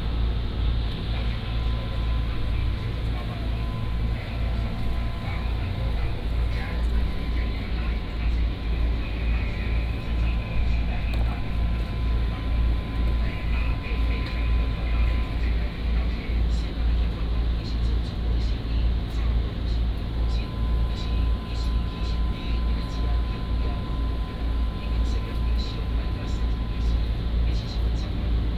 白沙尾觀光港, Hsiao Liouciou Island - In the cabin
In the cabin